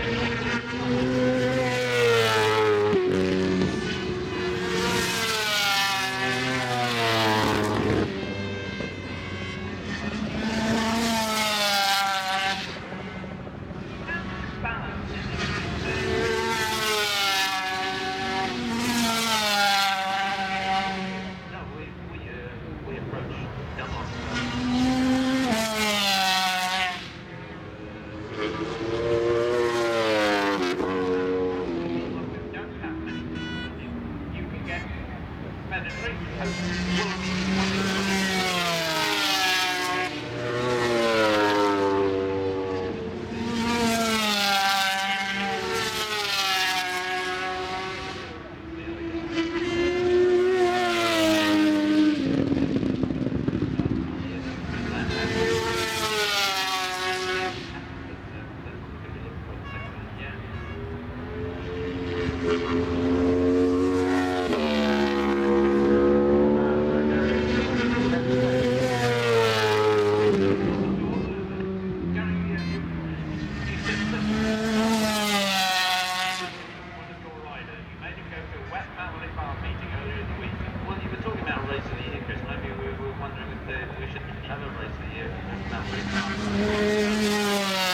Castle Donington, UK - British Motorcycle Grand Prix 2002 ... 500cc ...
500cc motorcyle warm up ... Starkeys ... Donington Park ... warm up and associated noise ... Sony ECM 959 one point stereo mic to Sony Minidisk ...
14 July 2002, 10:00